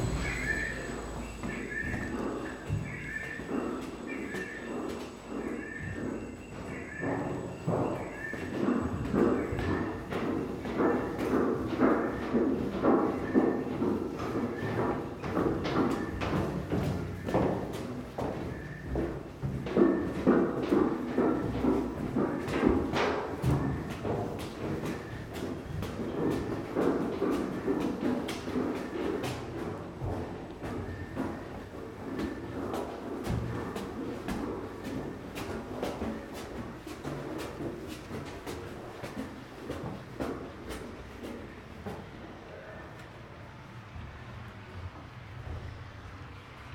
worlds largest dinosaur, Drumheller Alberta
reading the description and climbing up into the mouth of the worlds largest dinosaur
AB, Canada, 20 April, ~21:00